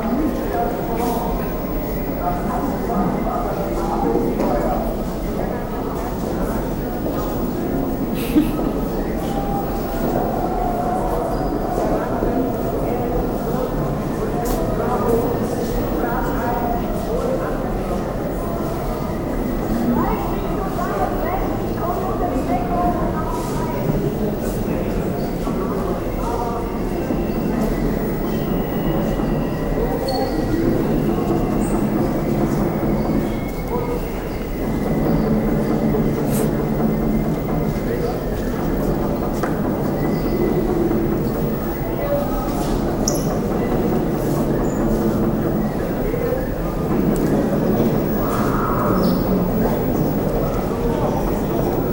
Düsseldorf, Grabbeplatz, Kunstsammlung NRW, ground floor - düsseldorf, grabbeplatz, ground floor
sound of a video documentation about a fluxus happening during the beuys exhibition -parallel people talking and walking
soundmap d - social ambiences, art spaces and topographic field recordings